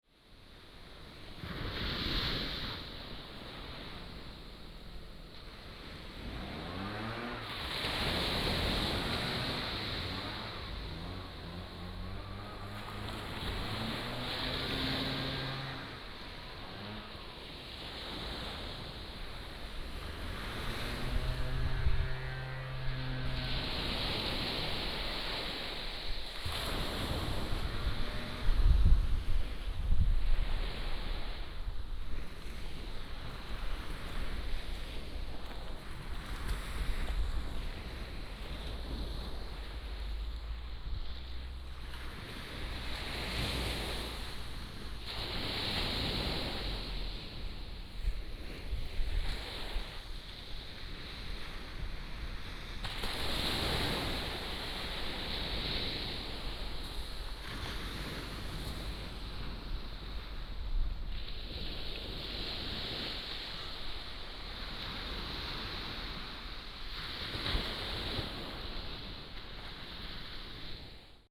午沙港, Beigan Township - Sound of the waves
Small port, Sound of the waves, At the beach